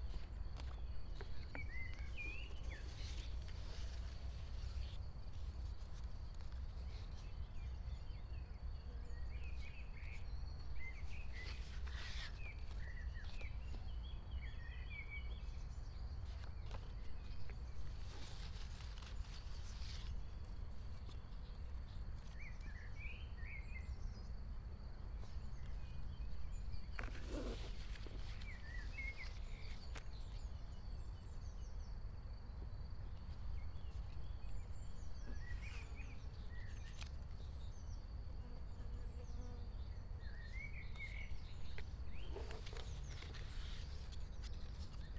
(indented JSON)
{
  "title": "Piramida, Maribor - solstice meadow",
  "date": "2013-06-21 05:08:00",
  "description": "early morning annual summer solstice variation of ”aleatory leaf novel”",
  "latitude": "46.57",
  "longitude": "15.65",
  "altitude": "385",
  "timezone": "Europe/Ljubljana"
}